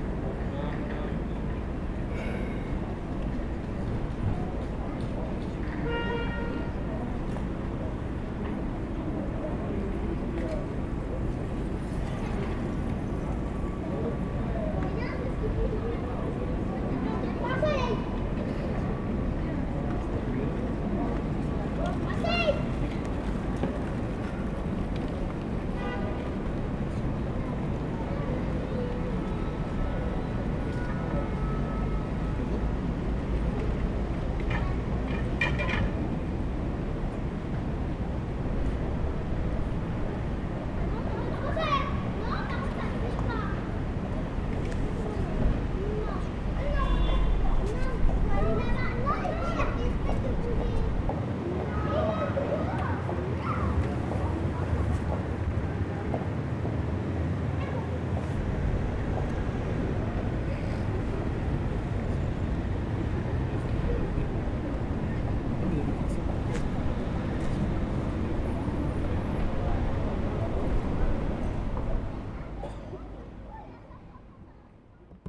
Pl. de la Gare, Grenoble, France - 1,2,3 Soleil
Devant la gare de Grenoble, jeux d'enfants, les bruits de la ville.
11 September 2022, 17:42